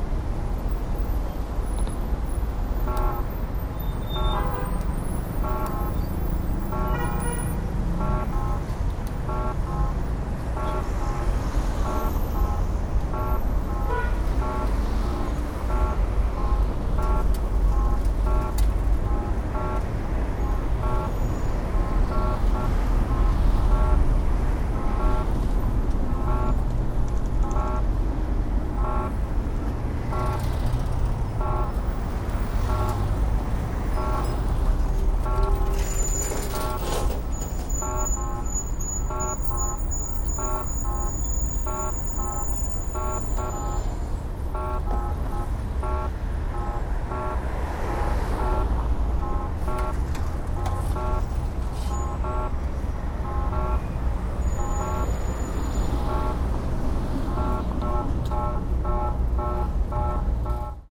beijing, centre, traffic light sounds
beijing cityscape - traffic lights in the evening
soundmap international
project: social ambiences/ listen to the people - in & outdoor nearfield recordings